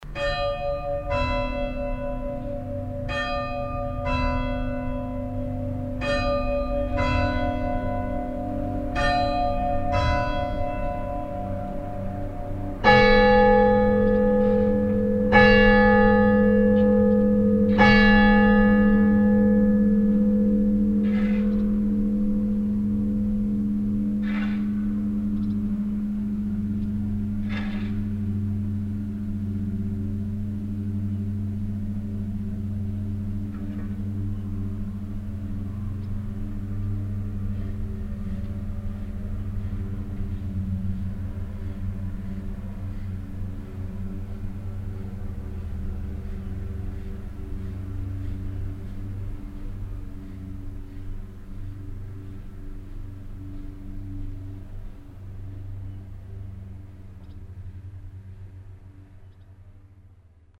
{"title": "kautenbach, saint-bernard church, bells", "date": "2011-08-09 12:56:00", "description": "At the Saint-Bernard church in Kautenbach on a windy summer afternoon. The sound of the hour bells of the church at 3 o clock reverbing in the valley and a nearby shovel from a street worker.\nKautenbach, Sankt-Bernard-Kirche, Glocken\nBei der Sankt-Bernard-Kirche in Kautenbach an einem windigen Sommernachmittag. Das Geräusch der Stundenglocke der Kirche um 3 Uhr hallt im Tal nach, und eine Schaufel von einem Straßenarbeiter.\nKautenbach, église Saint-Berard, cloches\nL’église Saint-Bernard de Kautenbach, un après midi d’été venteux. Le son de la cloche de l’église sonnant 15h00 se répercute dans la vallée et la pelle d’un ouvrier proche dans la rue.\nProject - Klangraum Our - topographic field recordings, sound objects and social ambiences", "latitude": "49.95", "longitude": "6.02", "altitude": "257", "timezone": "Europe/Luxembourg"}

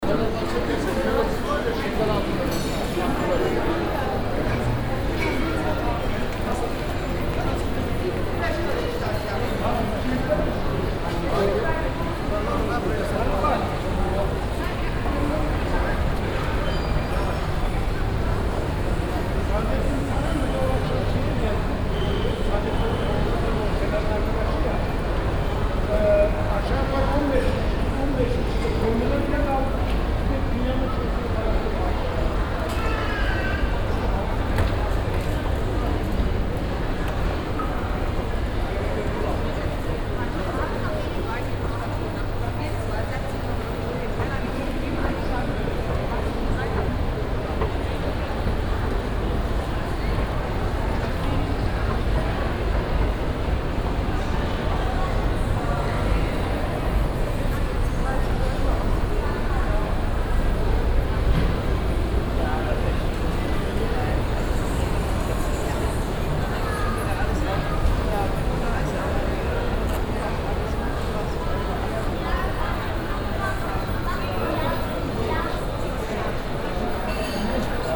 {"title": "cologne, kalk, kalker hauptstrasse, arcaden shopping mall", "description": "aternoon in the shopping mall, different spoken languages, people on the central moving staircase, dense acoustic\nsoundmap nrw social ambiences/ listen to the people - in & outdoor nearfield recordings", "latitude": "50.94", "longitude": "7.00", "altitude": "43", "timezone": "GMT+1"}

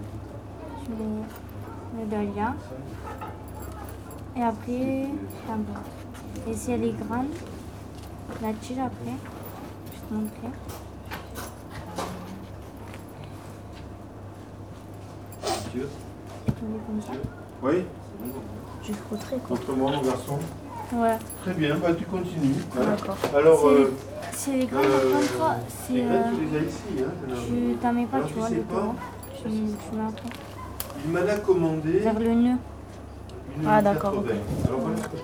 {"title": "Atelier d'horticulture, collège de Saint-Estève, Pyrénées-Orientales, France - Atelier d'horticulture, ambiance 1", "date": "2011-03-17 15:04:00", "description": "Preneur de son : Arnaud", "latitude": "42.71", "longitude": "2.84", "altitude": "46", "timezone": "Europe/Paris"}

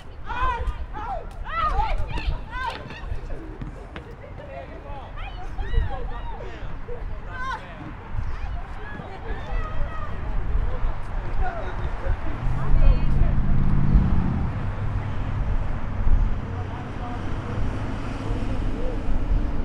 {"title": "Sullivan House Alternative High School, Southside", "description": "Sullivan House Alternative School, Field, Basketball, Hopscotch, High School, Kids, Playing, South Side, Chicago", "latitude": "41.74", "longitude": "-87.57", "altitude": "182", "timezone": "Europe/Berlin"}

